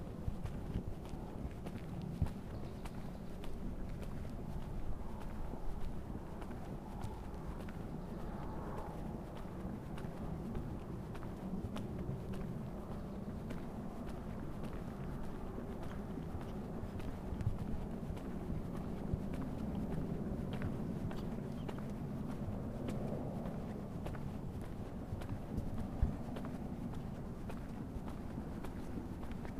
the horn is heard! goodbye! see you!
NM, USA, 28 June 2018